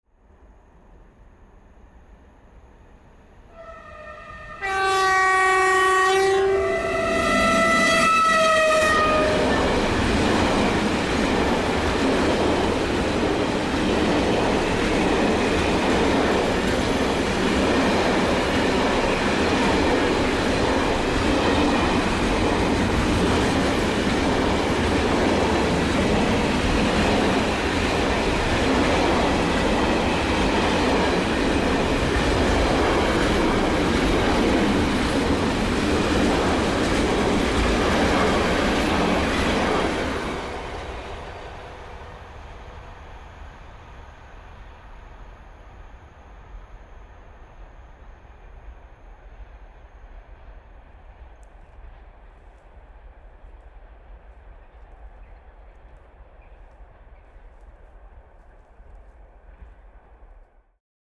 A freight train passing at some 70 km/h on its way to Antwerp. The train driver happened to be an old friend of mine who saw me standing there, so he added some music to the show. :-) Zoom H2.